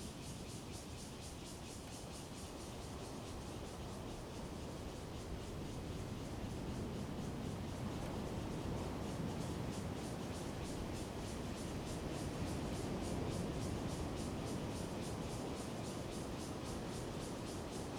Donghe Township, Taitung County - In the woods
In the woods, Cicadas sound, Sound of the waves, Very hot weather
Zoom H2n MS+ XY
都蘭林場 Donghe Township, Taitung County, Taiwan, September 2014